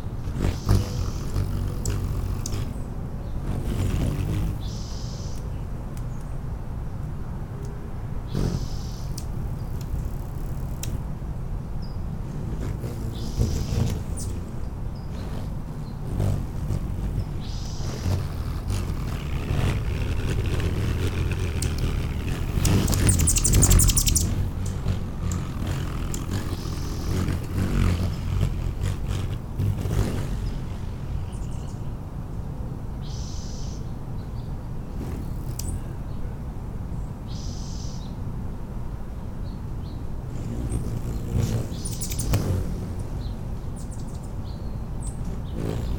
Emerald Dove Dr, Santa Clarita, CA, USA - World Listening Day: Hummingbirds
I've spent the past couple of months recording hummingbirds. I've got about 7 hours worth now. This is a really active 4 minute clip I just got this morning.
It's a trinaural recording from an array I designed myself.